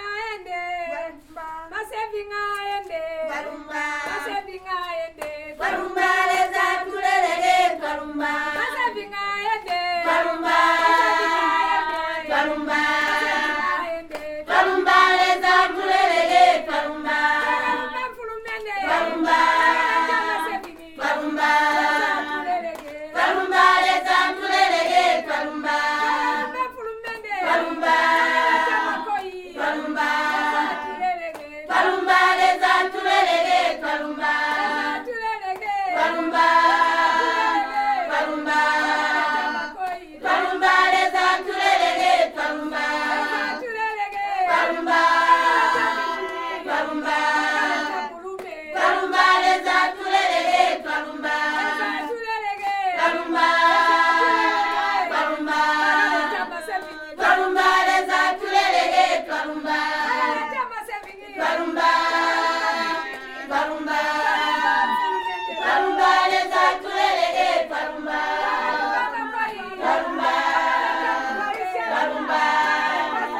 Lwiindi Ground, Sinazongwe, Zambia - twalumba...
Twalumba, Leza... a thank you song by all the women for the day... Thank you, Lord...
more from women clubs in Sinazongwe is archived here:
August 2016